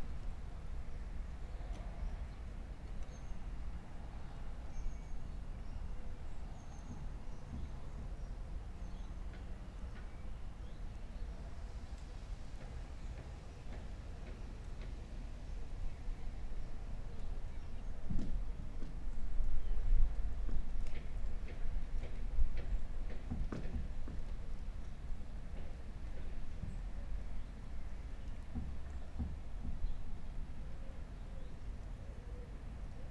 Recording from my garden just as lockdown is really easing, on World Listening Day using Rode microphones in ORTF configuration onto a Zoom F6 recorder. Weather conditions are light rain #wld2020 #worldisteningday
18 July 2020, 11:00